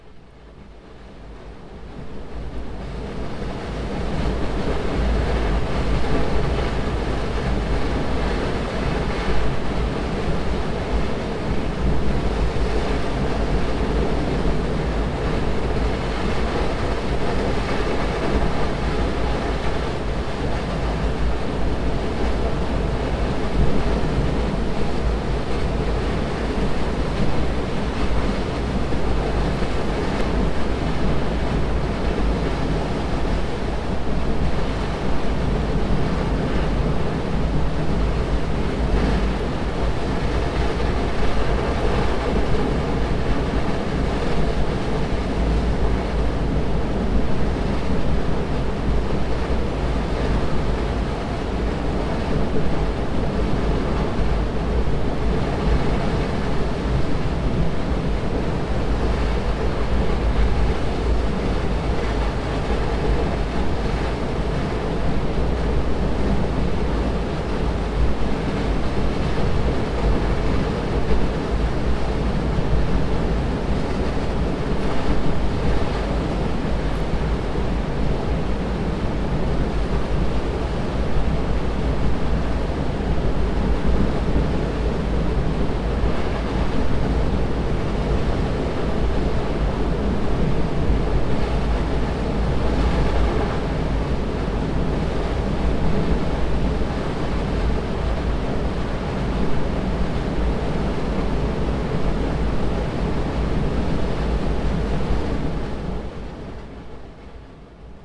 Une bien belle cascade, l'eau vient s'écraser sur les rochers 70m en contrebas, le son est sans cesse modulé par les aléas du débit . Je l'ai incluse dans une de mes pièces dans "Fleuve à l'âme" au Rhône. Le Séran est un affluent du Rhône.